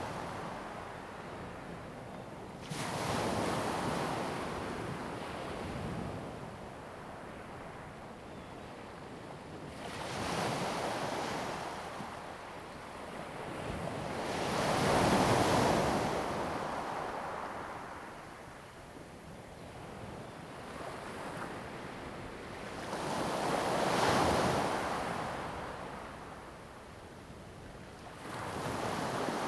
Jiayo, Ponso no Tao - In the beach
In the beach, Sound of the waves
Zoom H2n MS +XY